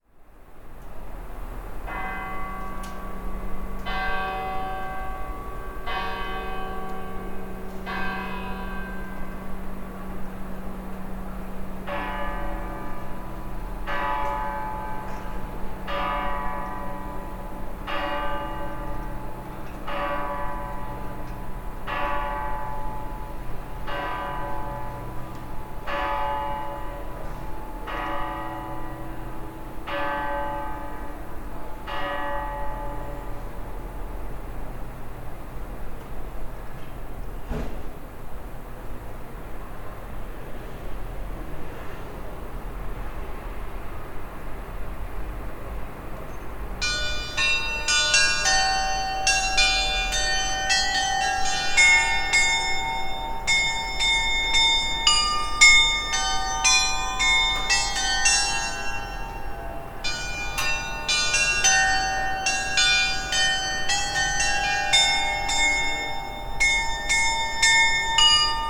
Evangelische Stadtkirche (Protestant City Church), Ambrosius-Vaßbender-Platz, Remscheid, Deutschland (Germany) - Glockenspiel - Carillon
Das Glockenspiel der Evangelischen Stadtkirche spielt mehrmals täglich zur vollen Stunde Lieder. Das Repertoire reicht von spirituellen Liedern bis hin zu Volksmusik.
The carillon of the Evangelische Stadtkirche (Protestant City Church) plays songs several times a day on the hour. The repertoire ranges from spiritual songs to folk music.
GW
Nordrhein-Westfalen, Deutschland